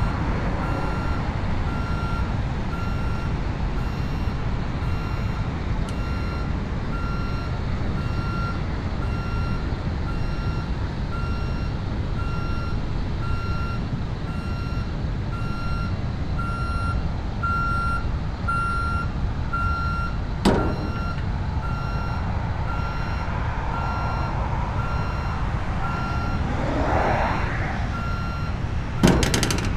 Niévroz, Impasse dAlsace, near the dam
A mule and an electric gate, drone coming from the dam.
SD-702, Me-64, NOS